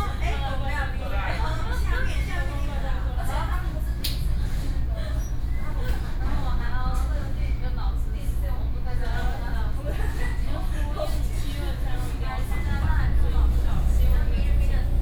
Shuangxi, New Taipei City - Ordinary train